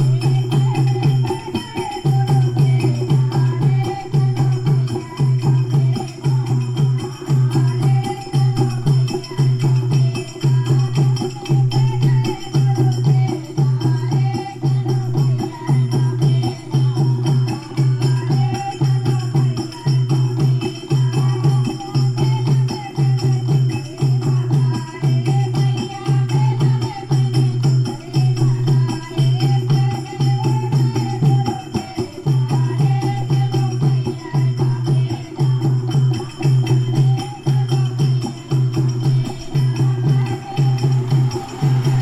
Pachmarhi, Madhya Pradesh, Inde - Song for Durga
A group of women is seated in front of a temple. They sing a pray all together. A percussion accompanies the singers.